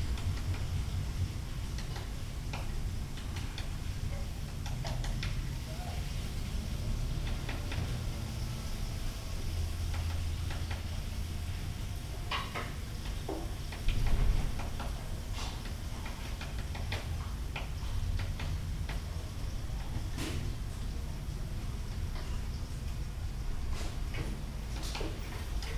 Berlin Bürknerstr., backyard window - neigbours cooking, a woodpecker
warm August day, neigbours are cooking, a woodpecker works in the trees
(Sony PCM D50, Primo EM172)
Berlin, Germany